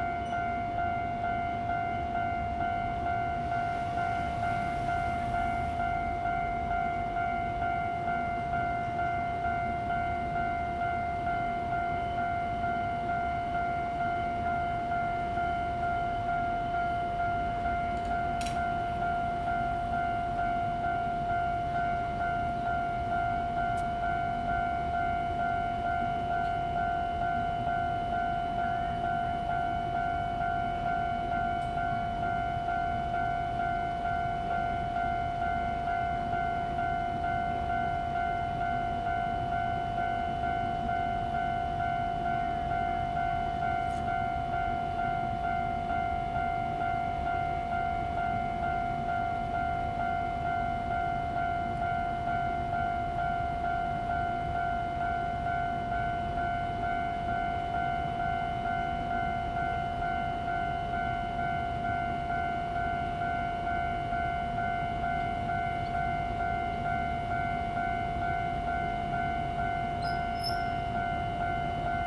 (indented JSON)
{
  "title": "Jianguo E. Rd., Taoyuan Dist. - Railroad Crossing",
  "date": "2017-07-27 09:46:00",
  "description": "Next to the railroad track, Cicada and Traffic sound, The train runs through\nZoom H2n MS+XY",
  "latitude": "24.99",
  "longitude": "121.32",
  "altitude": "100",
  "timezone": "Asia/Taipei"
}